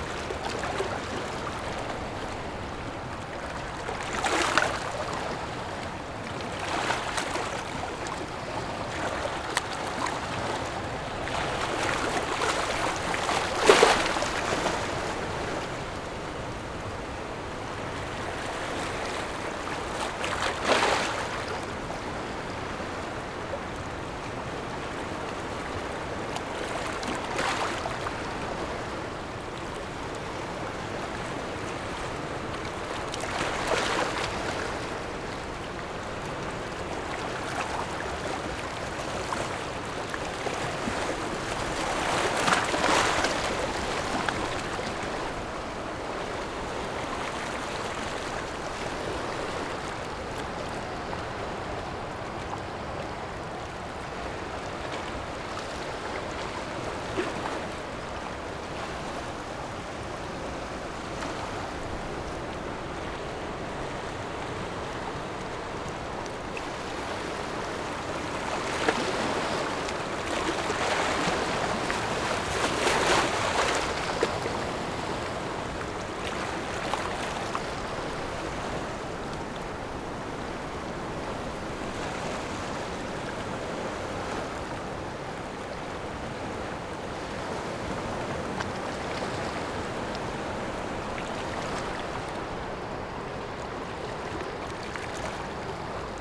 頭城鎮大坑里, Yilan County - Sound of the waves
Standing on the rocks, Sound of the waves, In the beach, Hot weather
Zoom H6 MS+ Rode NT4
Toucheng Township, Yilan County, Taiwan, 26 July 2014, 17:42